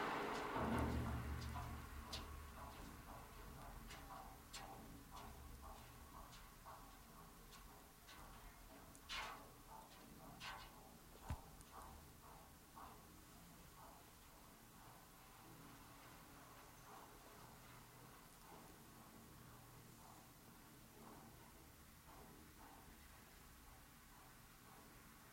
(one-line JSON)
{"title": "Maria Valeria Bridge, Sturovo-Esztergom, Traffic", "latitude": "47.80", "longitude": "18.73", "altitude": "101", "timezone": "GMT+1"}